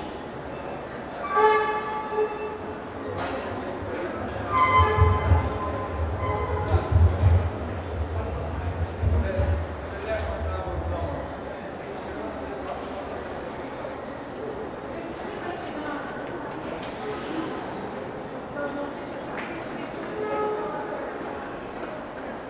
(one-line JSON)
{"title": "Kaponiera underpass, Poznan - trumpet escalator", "date": "2019-03-16 16:07:00", "description": "one of the escalators makes random squeaks that reverberate all around the ring underpass. It sounds as a street musician played an experimental free jazz piece on a trumpet. it's particularly interesting as it is a common spot for street musicians to perform in Poznan due to high pedestrian traffic in the underpass. (roland r-07)", "latitude": "52.41", "longitude": "16.91", "altitude": "84", "timezone": "Europe/Warsaw"}